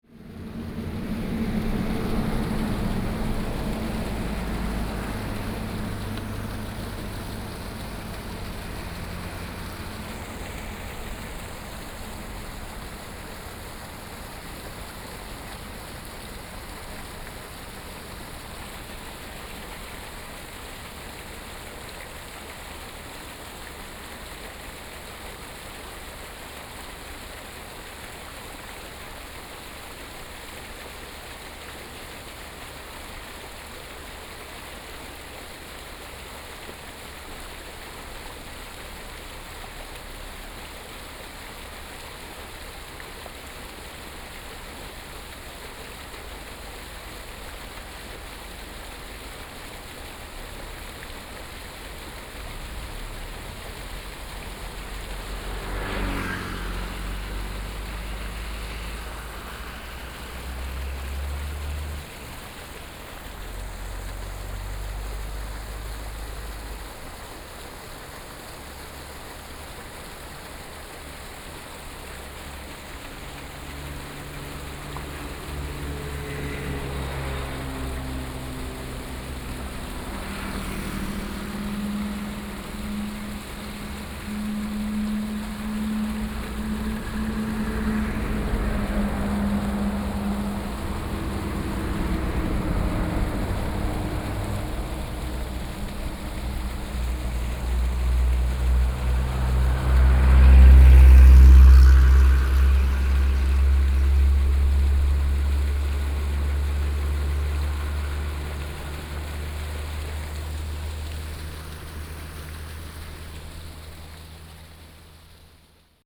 At the roadside, Traffic Sound, Fishpond, waterwheel sound
Sony PCM D50+ Soundman OKM II